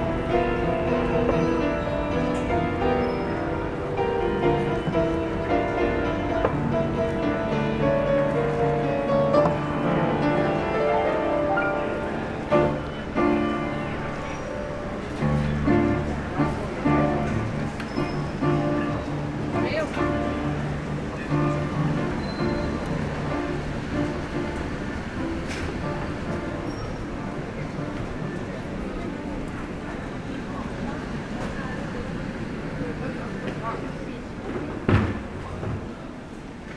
Den Haag, Nederlands - Den Haag station
Den Haag station. One person playing the station piano.